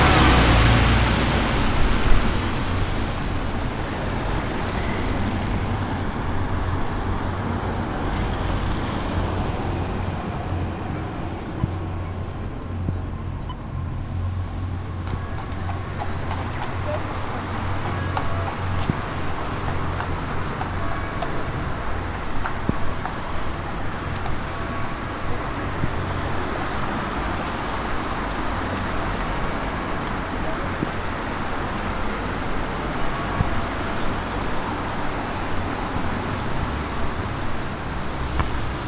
Dame Street, Dublin, Co. Dublin, Ireland - The Sunken Hum Broadcast 112 - Church Bells and City Traffic - 22 April 2013
Christchurch Cathedral in Dublin. Bells, voices, traffic, horsehoofs.
Republic of Ireland, European Union, 21 April 2013, 15:00